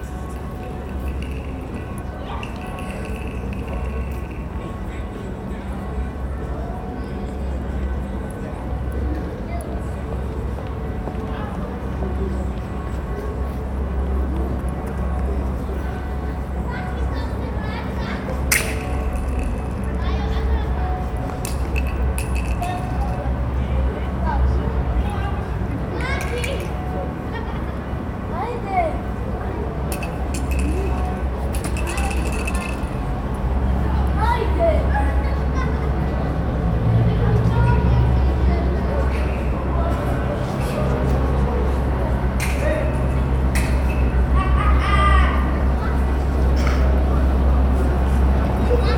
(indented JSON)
{
  "title": "Kosi toranj, Rijeka, city sounds",
  "date": "2009-06-01 18:50:00",
  "latitude": "45.33",
  "longitude": "14.45",
  "altitude": "5",
  "timezone": "Europe/Zagreb"
}